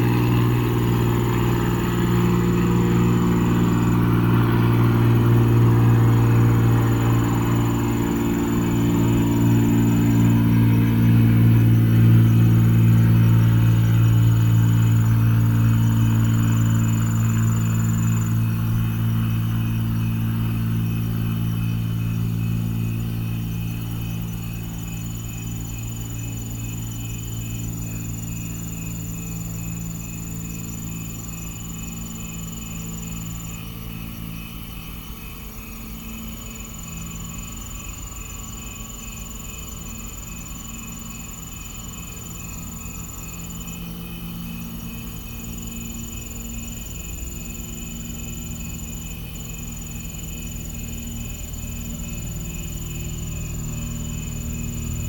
Main St, Geneseo, KS, USA - Geneseo Manhunt
A fixed wing Cessna circles above the fields on the south side of Geneseo. Radio chatter from a state highway patrol car in the background, barely audible over the crickets and cicadas. Stereo mics (Audiotalaia-Primo ECM 172), recorded via Olympus LS-10.
27 August 2017